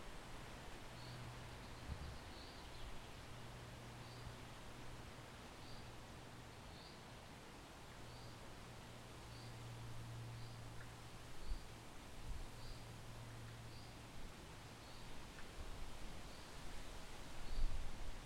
Unnamed Road, Schwetzingen, Deutschland - Schlossgarten Schwetzingen
Schritte im Kies, Rauschen des Windes in den Blättern der Bäume, Vogelgezwitscher. Morgenstimmung.